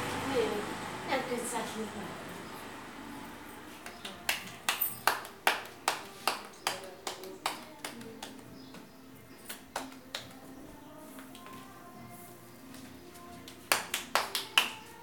San Juan La Laguna, Guatemala - Tortillas
March 2016